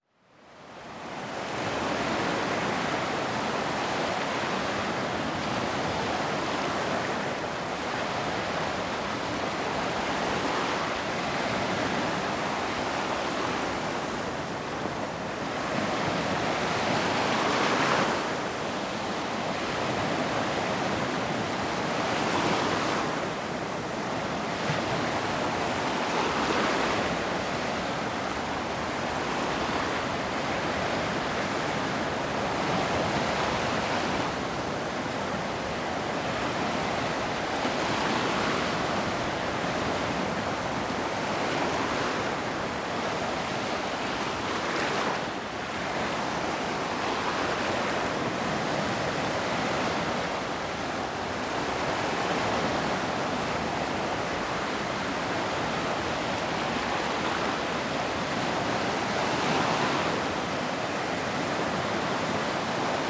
It was a fairly windy afternoon. This recording was made with Sony PCM-D100 handheld placed on a Rycote suspension. On top of a standard Sony windshield, I have placed Rycote BBG Windjammer.
województwo pomorskie, Polska, 8 July